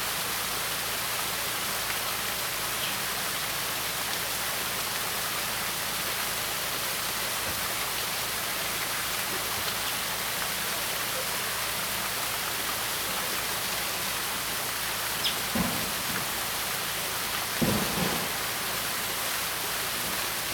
Pubu Road, Wulai Dist., New Taipei City - Sound of water and Birds
Sound of water, Birds call, Track construction
Zoom H2n MS+ XY